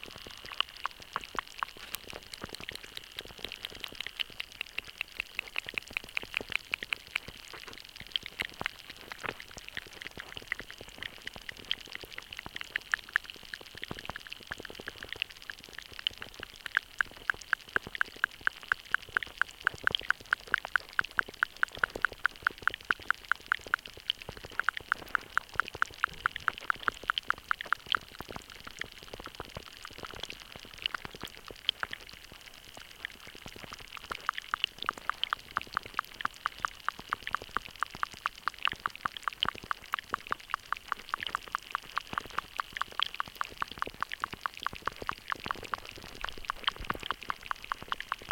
underwater life, perruel
homemade hydrophones in a small shallow stream leading to the andelle river in the village of perruel, haute normandie, france